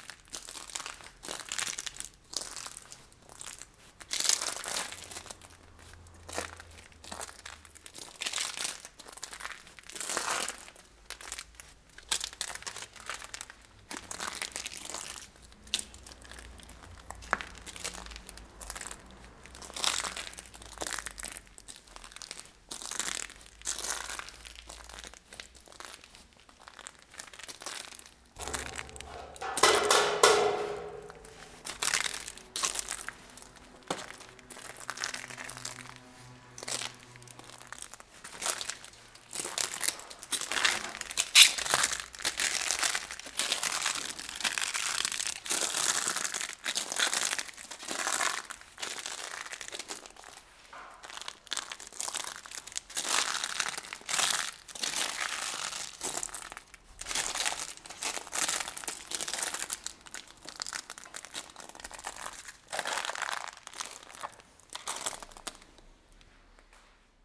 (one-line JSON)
{"title": "Seraing, Belgium - Dead painting", "date": "2017-10-29 14:30:00", "description": "Into a huge abandoned factory, the floor tile is coated with dead painting, coming from the walls and the ceiling. It makes a lot of scales. I'm walking on it.", "latitude": "50.60", "longitude": "5.54", "altitude": "79", "timezone": "Europe/Brussels"}